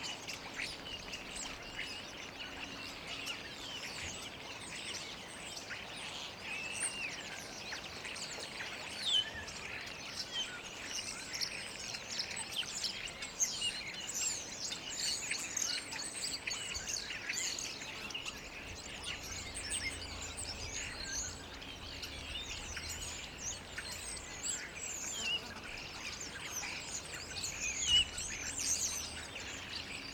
Kirchmöser Ost - starlings, woodpecker, ambience
Kirchmöser, garden ambience /w starlings, woodpecker and others
(Sony PCM D50)